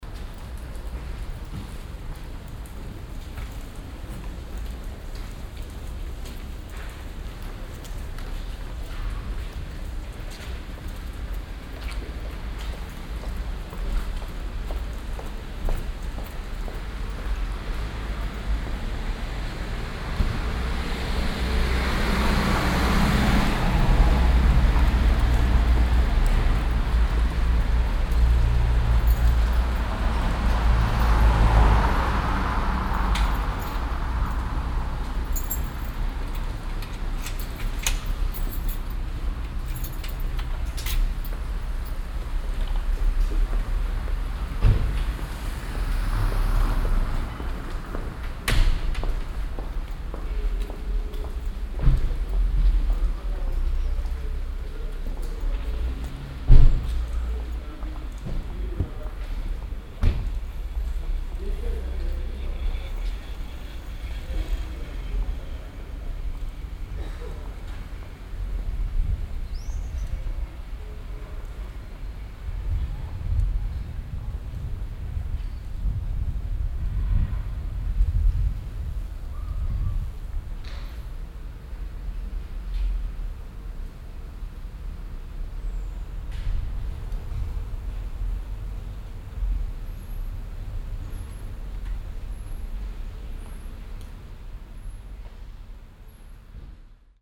cologne, mittelstrasse, morgens
soundmap: köln/ nrw
verkehr, lieferfahrzeuge, schritte auf kopfsteinpflaster, aufschliessen von geschäften
project: social ambiences/ listen to the people - in & outdoor nearfield recordings